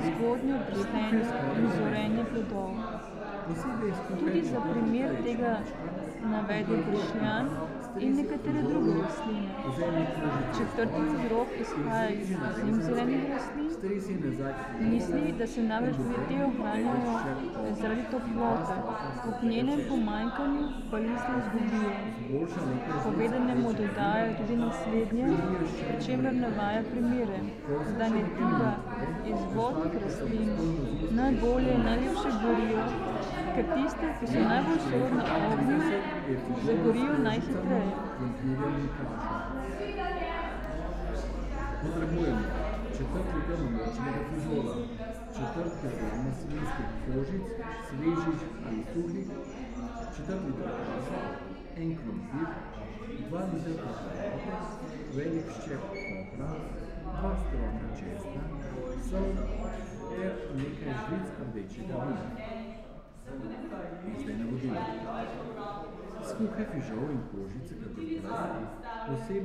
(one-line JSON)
{"title": "Secret listening to Eurydice, Celje, Slovenia - Public reading 8", "date": "2013-02-08 18:33:00", "description": "sonic fragment from 33m34s till 38m07s of one hour performance Secret listening to Eurydice 8 and Public reading 8, at the occasion of exhibition Hanging Gardens by Andreja Džakušič", "latitude": "46.23", "longitude": "15.26", "timezone": "Europe/Ljubljana"}